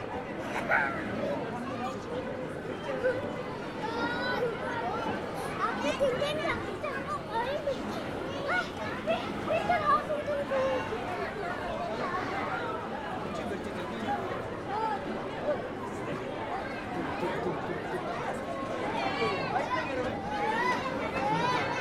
National amusement park, Ulaanbaatar, Mongolei - carousel
traditional carousel without music
Border Ulan Bator - Töv, Монгол улс, 1 June 2013, 15:20